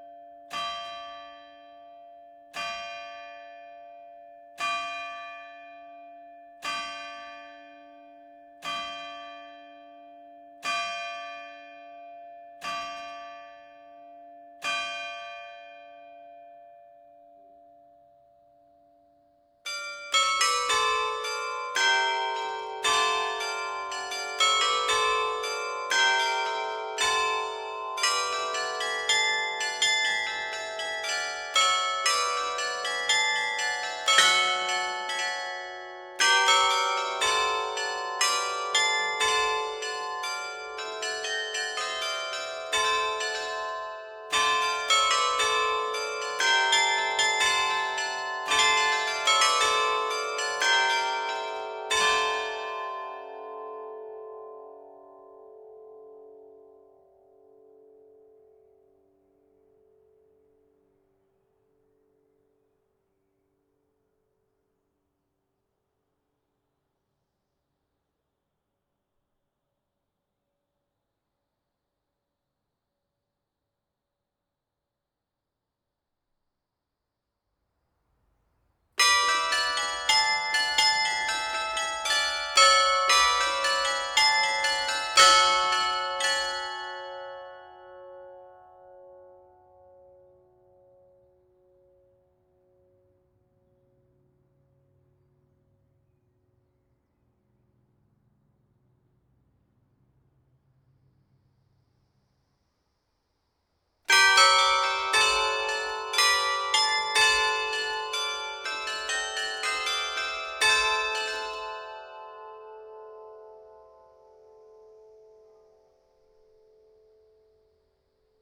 {"title": "Rue Henri Dupuis, Saint-Omer, France - St-Omer - Pas de Calais - Carillon de la Cathédrale", "date": "2022-05-13 10:00:00", "description": "St-Omer - Pas de Calais\nCarillon de la Cathédrale\nPetit échantillon des diverses ritournelles automatisées entre 10h et 12h\nà 12h 05 mn, l'Angélus.", "latitude": "50.75", "longitude": "2.25", "altitude": "22", "timezone": "Europe/Paris"}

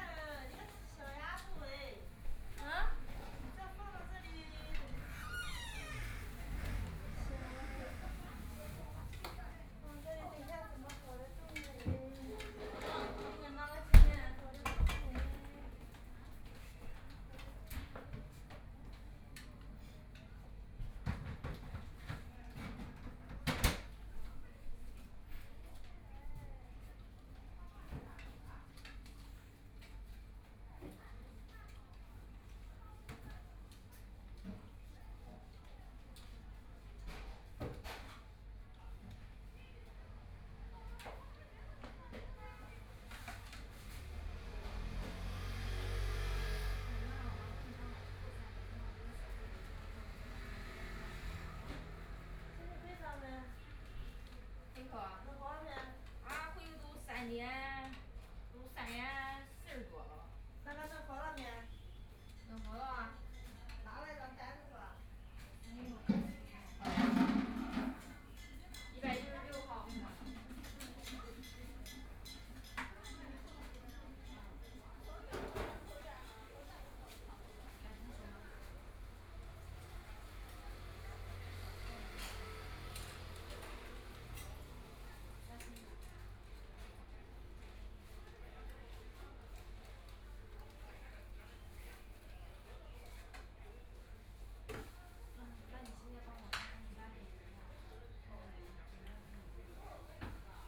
{"title": "Guizhou Road, Huangpu District - In the restaurant", "date": "2013-11-25 16:55:00", "description": "In the restaurant, Binaural recording, Zoom H6+ Soundman OKM II", "latitude": "31.24", "longitude": "121.47", "altitude": "18", "timezone": "Asia/Shanghai"}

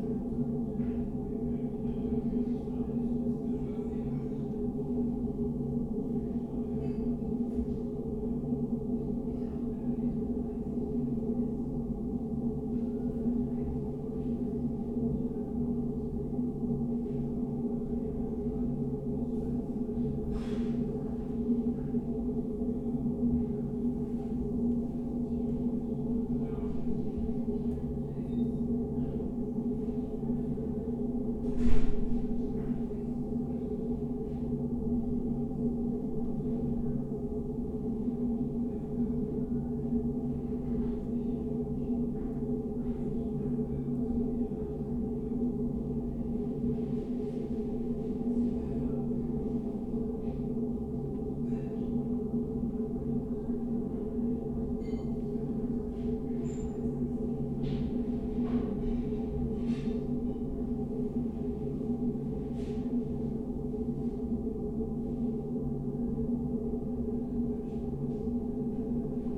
{"title": "ringo - toilet ventilation", "date": "2014-11-22 14:05:00", "description": "ventilation at the men's toilet, Ringo Cafe, a familiar sound, disappearing soon.\n(Sony PCM D50, DPA4060)", "latitude": "52.49", "longitude": "13.42", "altitude": "45", "timezone": "Europe/Berlin"}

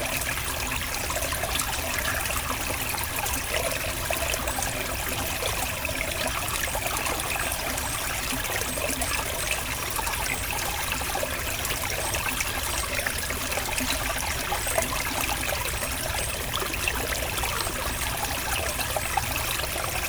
{"title": "Court-St.-Étienne, Belgique - Ry de Beaurieux river", "date": "2016-08-26 08:20:00", "description": "The Ry de Beaurieux is a small stream flowing behind the houses. Access to this river is difficult.", "latitude": "50.64", "longitude": "4.60", "altitude": "77", "timezone": "Europe/Brussels"}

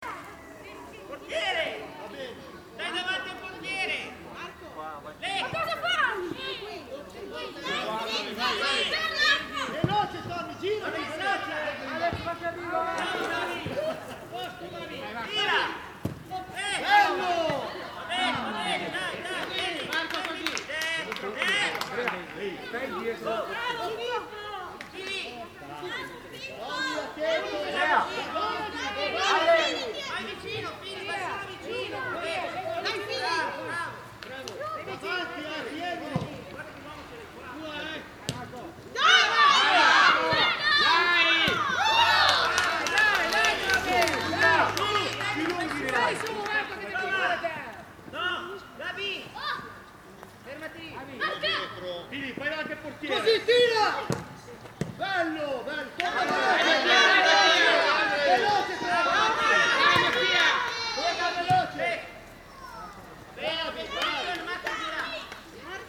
{"title": "Different perspectives: II C - Torre D'arese, Italy - life in the village - II - perspective C", "date": "2012-11-03 15:02:00", "description": "Just few meters away from (lazy) perspective IIB, kids training football (active), no sounds coming from the other prespectives, although very close", "latitude": "45.24", "longitude": "9.32", "altitude": "78", "timezone": "Europe/Rome"}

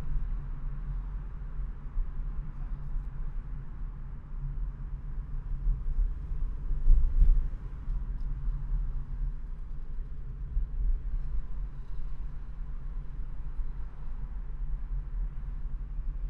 {"title": "Driving along the IDR, Reading, UK - Driving along the IDR and passing under the really noisy bridge", "date": "2014-03-21 14:20:00", "description": "This is the sound of driving around the Internal Distribution Road in Reading. I know traffic sounds are generally frowned upon, but since they are such a regular feature of daily life I often wonder what can be gained by analysing and documenting them? At 01:25 you hear a specially echoey resonance; this is the sound I think of passing underneath the railway bridge. It's thrillingly loud under there and as a pedestrian of the city, the only way I can deal with it is to reframe it as a permanent noise installation created by some Futurist machine enthusiast. In fact re-imagining it as this means I enjoy the sudden burst of noise when I pass beneath it, because it is a reminder that with imagination I can change how I feel about any sound.", "latitude": "51.46", "longitude": "-0.98", "altitude": "40", "timezone": "Europe/London"}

